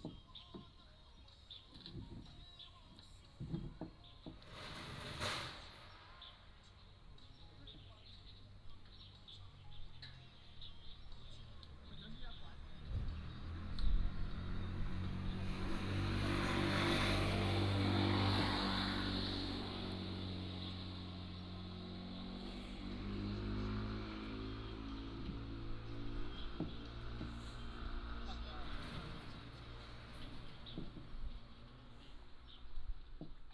怡園, 塘岐村 - Next to the park
Next to the park, Traffic Sound, Construction, Birds singing